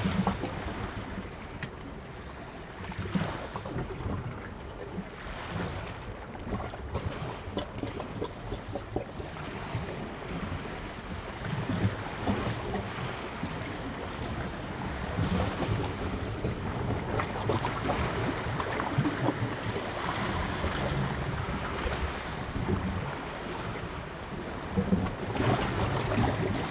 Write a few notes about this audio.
Gurgling under the slip way ... East Pier Whitby ... open lavalier mics clipped to sandwich box ... almost flat calm sea ... overcast ...